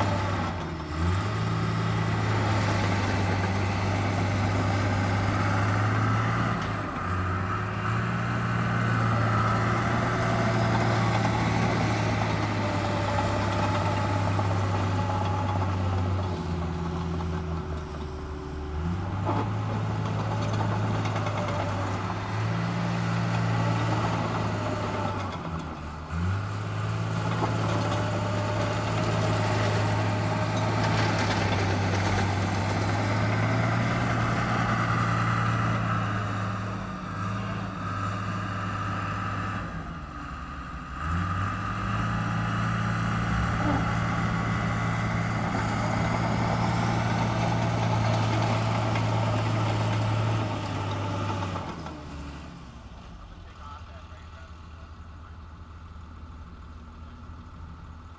Lake St. near Hopkins Dr., Bear Lake, MI, USA - Last Vestiges of Cook's 66
Heavy Caterpillar machinery finishes demolition work and smooths the soil. A small green and white building, for many years the last remnant of Cook's 66 service station, has been torn down. Stereo mic (Audio-Technica, AT-822), recorded via Sony MD (MZ-NF810, pre-amp) and Tascam DR-60DmkII.